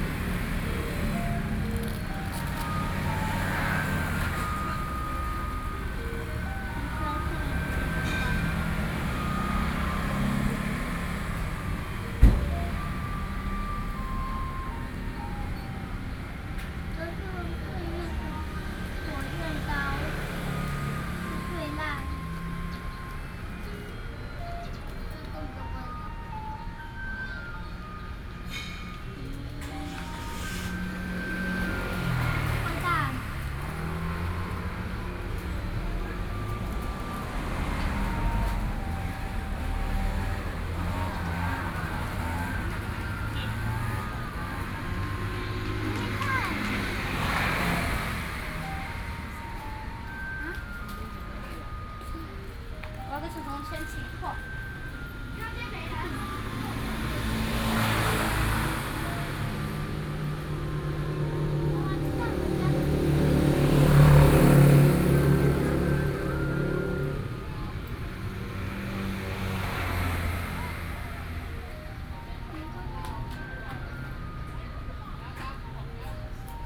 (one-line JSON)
{"title": "Taoyuan - Mother and child", "date": "2013-08-12 13:05:00", "description": "In the library next to the sidewalk, Sony PCM D50 + Soundman OKM II", "latitude": "24.99", "longitude": "121.31", "altitude": "104", "timezone": "Asia/Taipei"}